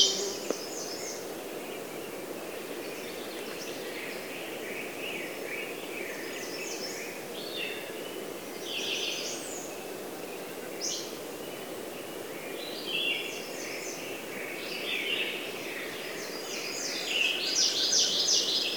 Nature Conservancy Oxbow Preserve near Swan Lake
Bigfork, Mt, USA, 25 June 2011, 10:39